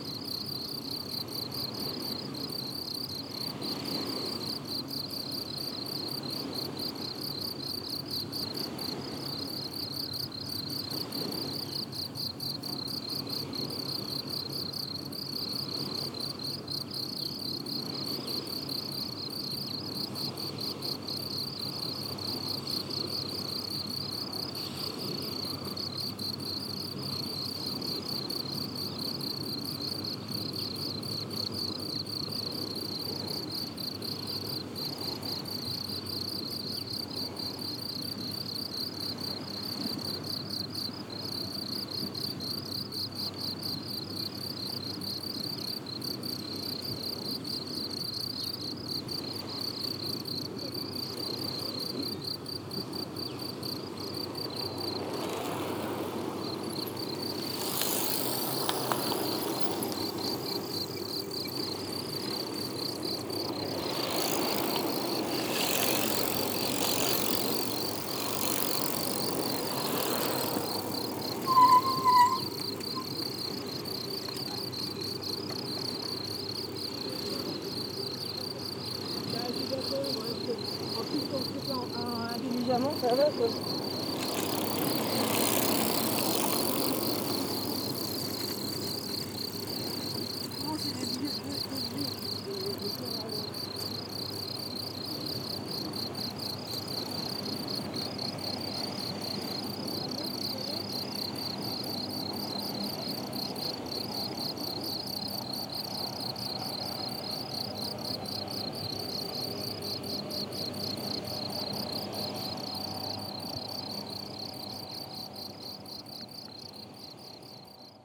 La Flotte, France - Grasshoppers
Grasshoppers singing in the pastures, with distant sound of the sea and a plane passing.